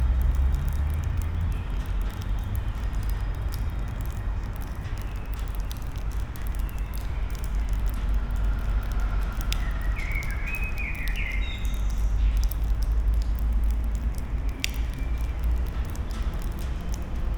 Pont Adolphe, Luxembourg - under bridge, drops
dripping water below Pont Adolphe, sound of traffic
(Olympus LS5, Primo EM172)